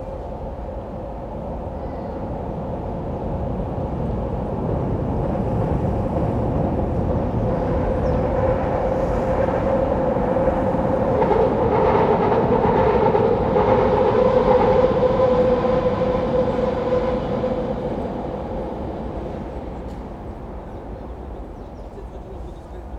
Segitzdamm, Berlin, Germany - The elevated U-Bahn moans, traffic flaps on cobble stones
The sound of the U-Bahn across Wassertorplataz and the very close sound of cars along the cobbled street. It's an open noisy acoustic. But walk off the street behind the buildings here and a quite different sound world exists.